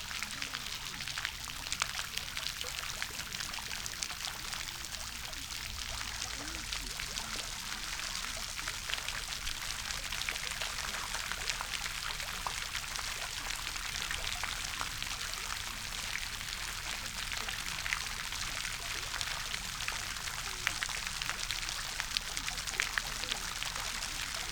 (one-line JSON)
{"title": "Back Ln, Malton, UK - fountainette ... again ...", "date": "2019-08-02 11:30:00", "description": "fountainette again ... SASS on tripod ... movement of the plume of water by a gentle wind ...", "latitude": "54.17", "longitude": "-0.68", "altitude": "31", "timezone": "Europe/London"}